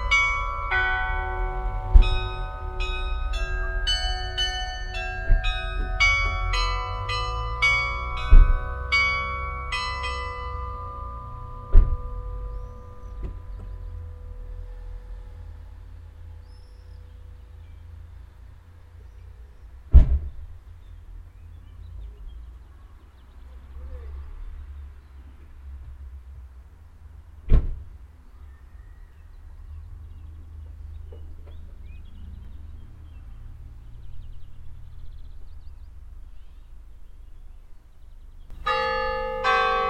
clervaux, church, bell
The bell play of Clervaux's church St. Barholomäus. Here recorded at 7 clock p.m.
At the end the slamming of some car doors nearby.
Clervaux, Kirche, Glocke
Das Glockenspiel der Clerfer Dekanatskirche. Aufgenommen um 7 Uhr abends. Am Ende das Schlagen von Autotüren.
Clervaux, église, cloches
Le carillon de l’église Saint-Barthélemy de Clervaux. Enregistré à 19h00. À la fin, une portière de voiture qui claque.
Projekt - Klangraum Our - topographic field recordings, sound objects and social ambiences